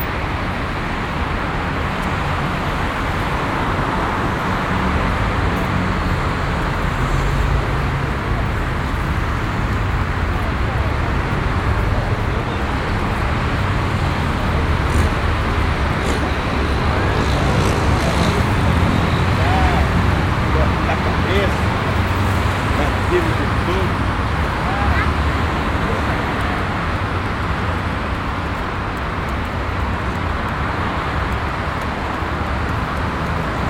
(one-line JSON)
{"title": "Sao Paulo, Liberdade, crossing the bridge from north to south", "latitude": "-23.56", "longitude": "-46.64", "altitude": "762", "timezone": "Europe/Berlin"}